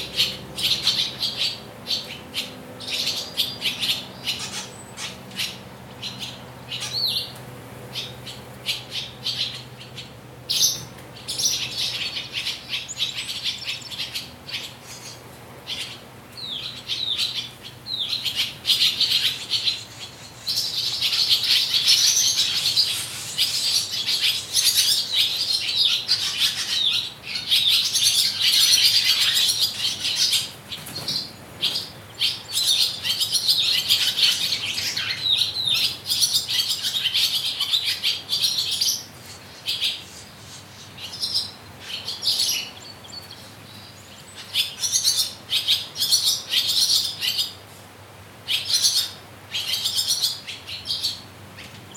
Tommy Thompson Park, Toronto, ON, Canada - WLD 2018: Swallows at Tommy Thompson Park
A covered outdoor education area in Tommy Thompson Park that has been taken over by swallows as a nesting area.
18 July 2018